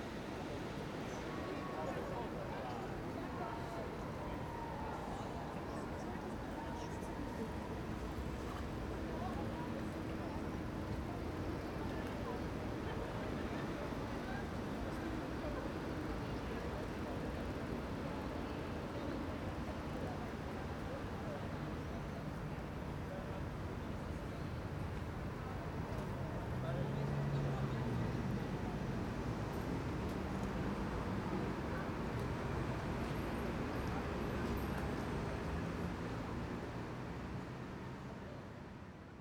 Schlosshof, Mannheim, Deutschland - Im Schlosshof
Schlosshof, Wind, Verkehr im Rücken, Menschen die Lachen, Menschen die Vorbeigehen, Urban